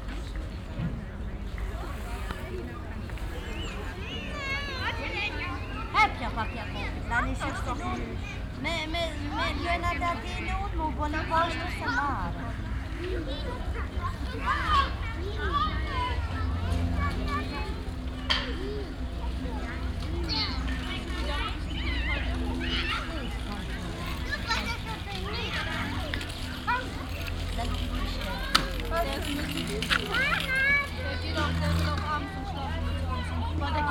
Borbeck - Mitte, Essen, Deutschland - essen, schloß borbeck, playground
Auf einem Spielplatz im Schloßpark Borbeck. Die Klänge von Stimmen spielender Kinder, Mütter unterhalten sich. Ein tiefsonoriges Flugzeug kreuzt den Himmel.
At a playground in the park of Schloß Borbeck.The sound of the voices of playing childrens and talking mothers. A plane is crossing the sky
Projekt - Stadtklang//: Hörorte - topographic field recordings and social ambiences